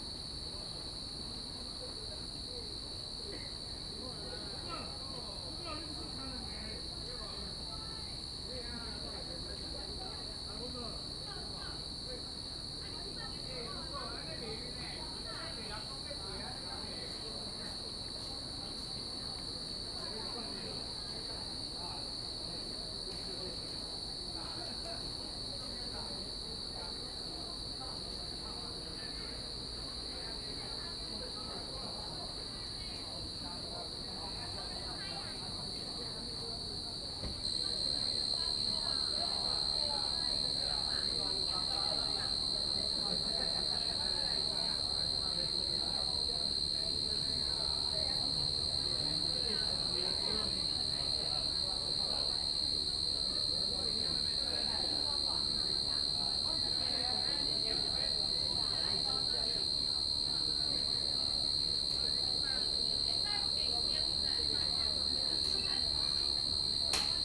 台東森林公園, Taitung City - Insects sound
Insects sound, Evening in the park, Dogs barking
Zoom H2n MS+ XY
Taitung County, Taiwan, 8 September 2014, ~18:00